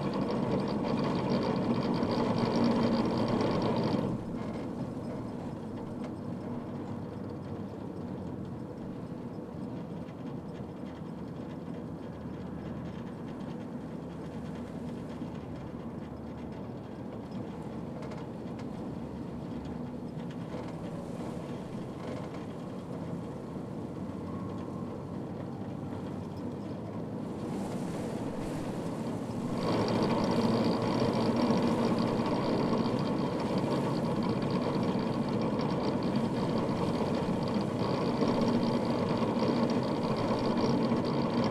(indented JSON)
{
  "title": "Lithuania, Meiliunai, wind power plant",
  "date": "2011-12-10 11:40:00",
  "description": "small self-built wind power plant",
  "latitude": "56.02",
  "longitude": "24.80",
  "altitude": "65",
  "timezone": "Europe/Vilnius"
}